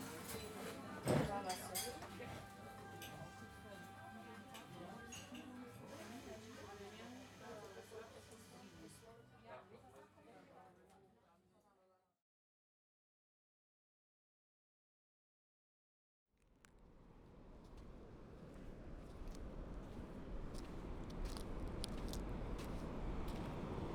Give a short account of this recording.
Recording made during a walk from the trainstation to the beach of Oostduinkerke. recorded, edited and mixed by Eline Durt and Jelle Van Nuffel